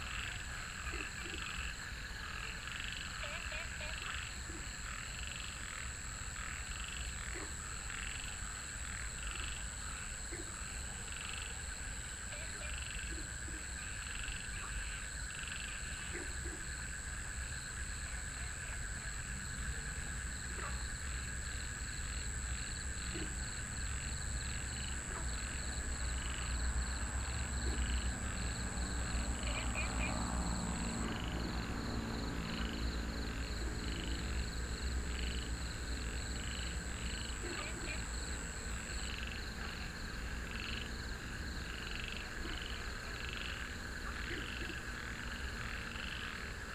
桃米紙教堂, 桃米里桃米巷 - Frogs chirping
Frogs chirping, Traffic Sound
Nantou County, Puli Township, 桃米巷52-12號, 18 April